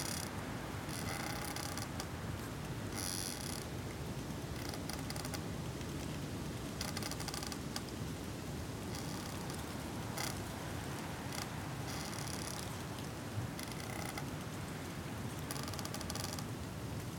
Troon, Camborne, Cornwall, UK - Swaying In The Wind
Recorded in a woods, this is the sound of a tree creaking whilst being pushed by the wind. Recorded with a Tascam DR100 and DPA4060 microphones.